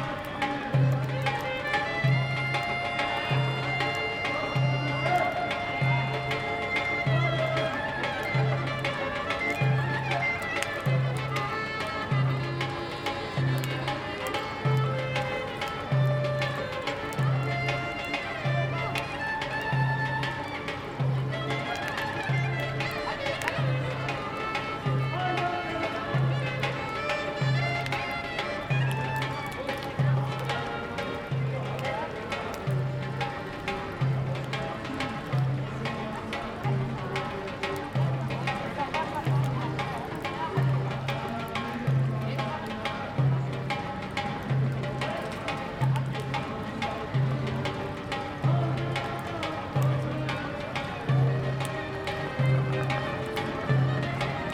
Köln, Deutschland - Straßenmusiker
Türkisch klingende Straßenmusiker. / Turkish sounding buskers.